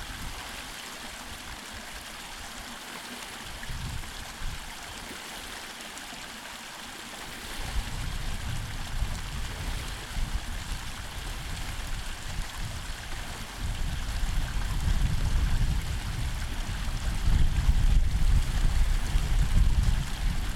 loading... - dead sea
hot springs in the dead sea